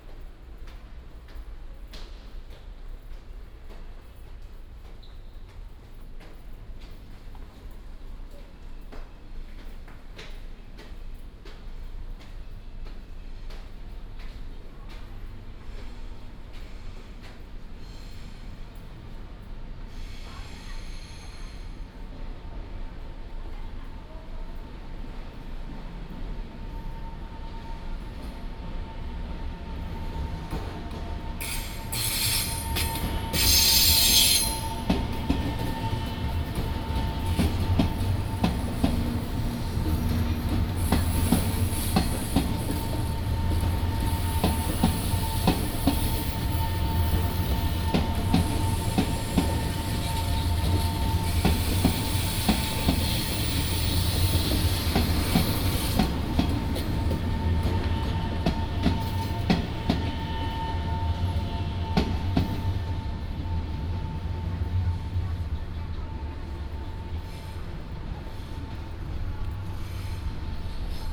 Ln., Chenggong 1st Rd., Keelung City - Walking through the rail underpass
Traffic Sound, Walking through the rail underpass, Traveling by train
4 August, ~08:00